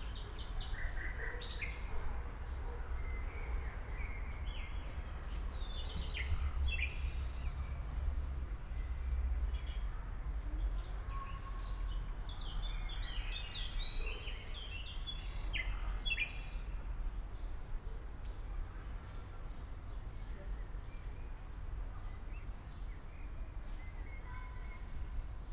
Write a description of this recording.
ambience around house near Elsenstr. Sonic exploration of areas affected by the planned federal motorway A100, Berlin. (SD702, Audio Technica BP4025)